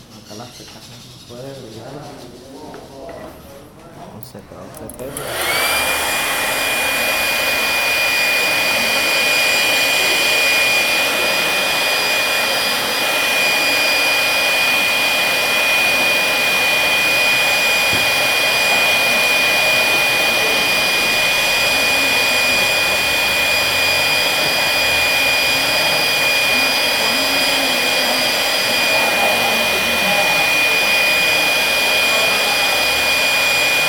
Joyería Ginna, Mompós, Bolívar, Colombia - Joyero
Un joyero del taller de la joyería Ginna trabaja los últimos retoque de una pulsera de plata.